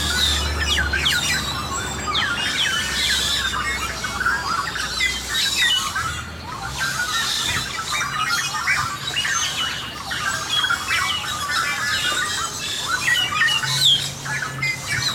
Los Ceibos, Guayaquil, Ecuador - WLD 2013: Árbol de tilingos (Tilingo trees)
Author: Juan José Ripalda
Location: Los Ceibos, calle Dr. Carlos Alberto del Río
Equipment: Tascam DR-07 mk II / Soundman OKM Binaural
Everyday at 6 am and 6 pm, birds from all over the city of Guayaquil gather in specific trees to form a choir of indistinct yet rich tonalities. In the neighborhood of Los Ceibos, tilingo birds find cane trees to complete their mystic hours.
Provincia del Guayas, Ecuador, March 2013